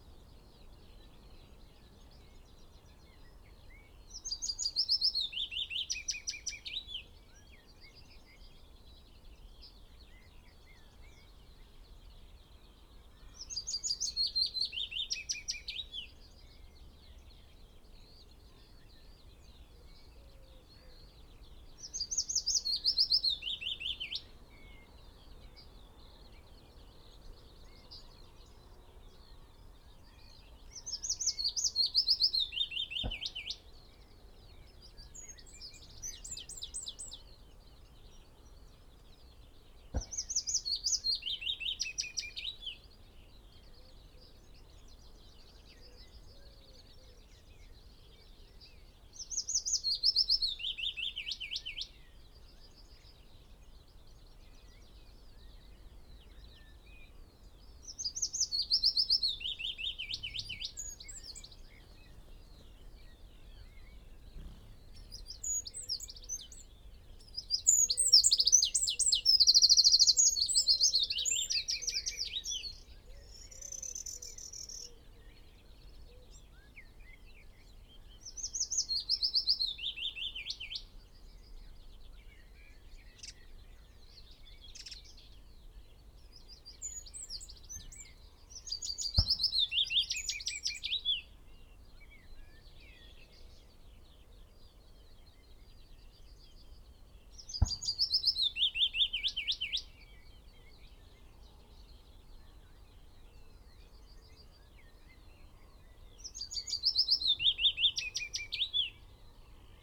willow warbler song ... dpa 4060s clipped to bag wedged in the fork of a tree to Zoom H5 ... bird calls ... song ... from ... magpie ... wood pigeon ... pheasant ... wren ... blackbird ... dunnock ... skylark ... blackcap ... yellowhammer ... red-legged partridge ... linnet ... chaffinch ... lesser whitethroat ... crow ... an unattended extended unedited recording ... background noise ... including the local farmer on his phone ...